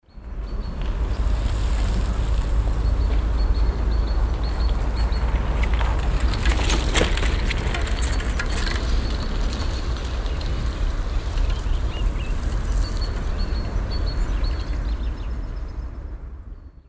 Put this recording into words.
vorbeifahrt eines fahrrads über stillgelegtes bahngleis am frühen abend, soundmap nrw: topographic field recordings & social ambiences